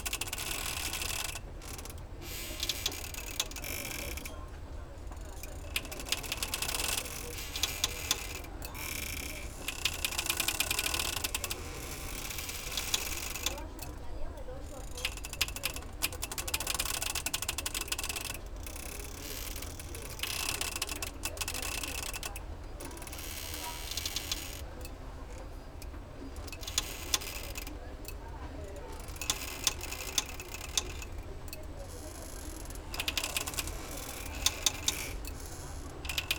sea room, Novigrad, Croatia - moody tales
built in closet, open windows